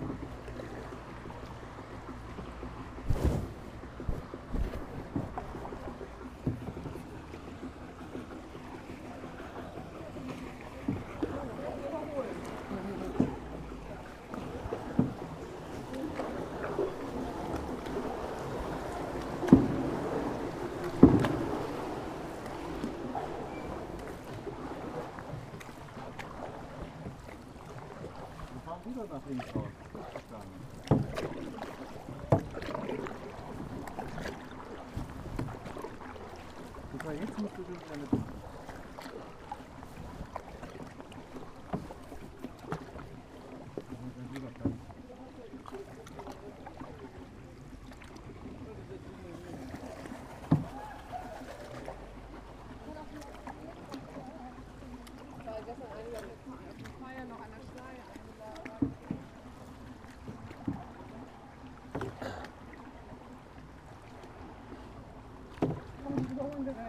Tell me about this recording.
Paddeling with a canoo in Hamburg